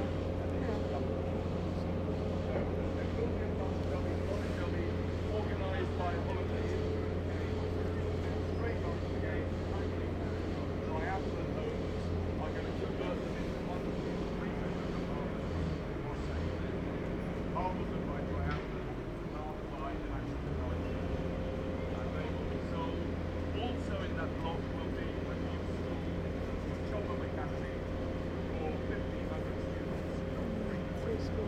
London Borough of Newham, UK - view over Olympic site
14 March 2012